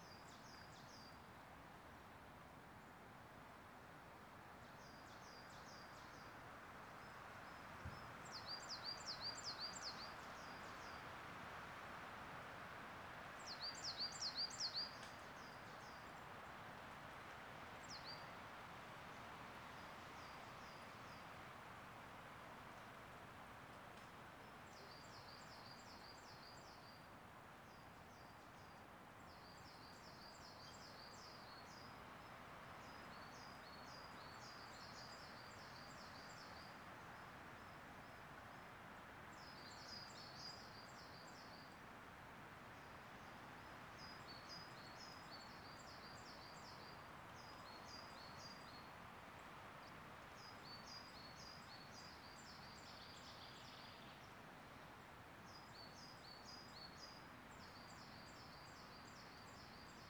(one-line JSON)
{"title": "Unnamed Road, Morpeth, UK - Harwood Forest birdsong", "date": "2020-03-21 12:40:00", "description": "Bird song in ride of Harwood Forest in Northumberland recorded on a Tascam DR-05", "latitude": "55.21", "longitude": "-2.03", "altitude": "267", "timezone": "Europe/London"}